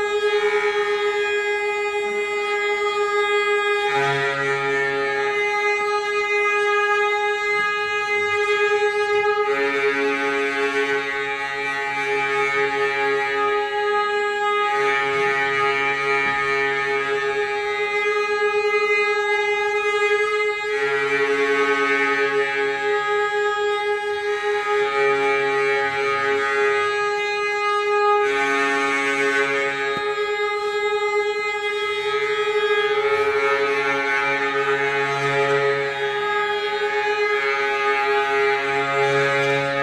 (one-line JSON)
{"title": "Ankersmit and Chessex at Tuned City", "date": "2008-07-15 16:19:00", "latitude": "52.48", "longitude": "13.50", "altitude": "39", "timezone": "Europe/Berlin"}